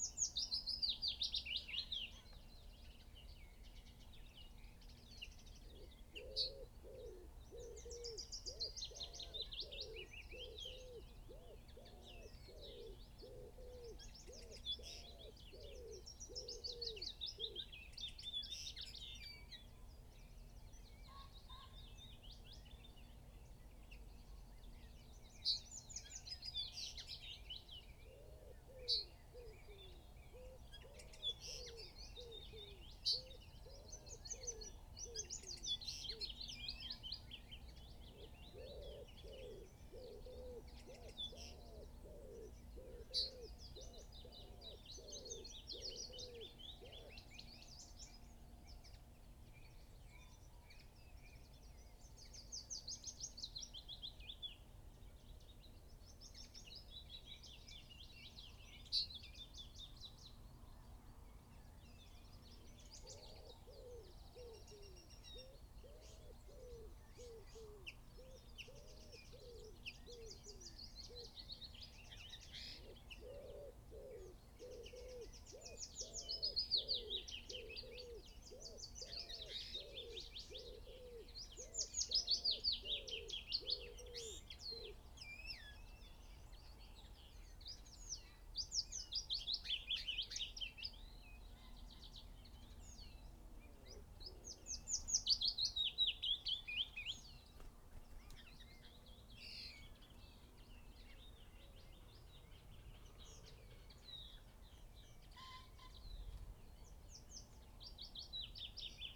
willow warbler song soundscape ... dpa 4060s clipped to bag in crook of tree to zoom h5 ... bird song ... calls from ... wren ... wood pigeon ... song thrush ... crow ... pheasant ... dunnock ... chaffinch ... yellowhammer ... buzzard ... magpie ... blackbird ...
Malton, UK, 17 April 2022, 06:00